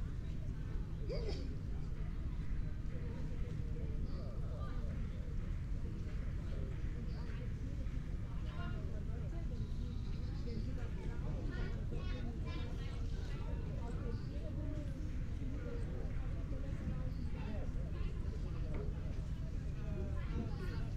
Klaipėda, Lithuania, waiting for the ferry